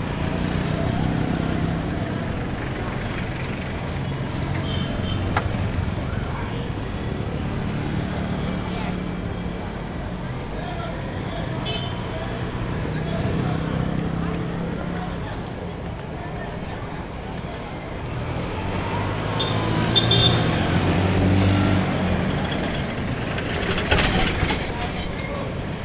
sitting in a salooncar, waiting, recorder at the window, smooth traffic, recorded with a zoom h2
Kampala, Uganda